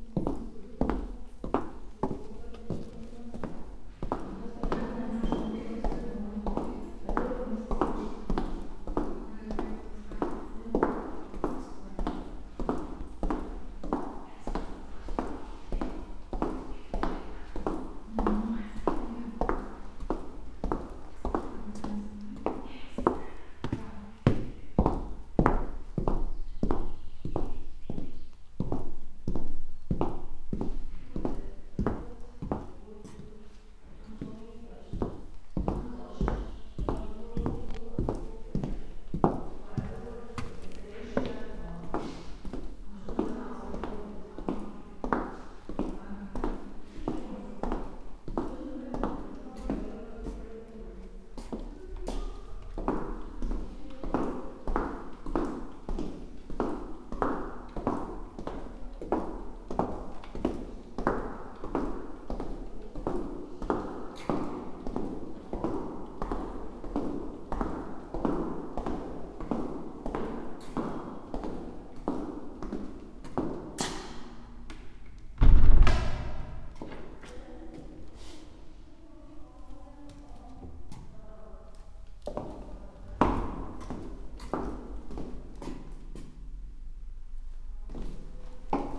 listening to the rooms, echoing them with clogs, just a decade ago madhouse was here, now castle is empty most of the time, silently waiting for the future, listening to lost voices ...